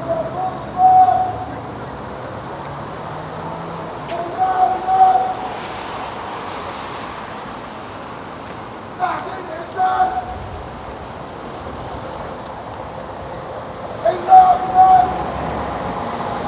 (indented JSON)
{
  "title": "COUCOU LES NAUFRAGES ! L'harrangueur d'Hermannplatz - L'harrangueur d'Hermannplatz",
  "date": "2012-02-12 20:00:00",
  "description": "COUCOU LES NAUFRAGES !\nCaptured by Oscar Inzo",
  "latitude": "52.49",
  "longitude": "13.42",
  "altitude": "42",
  "timezone": "Europe/Berlin"
}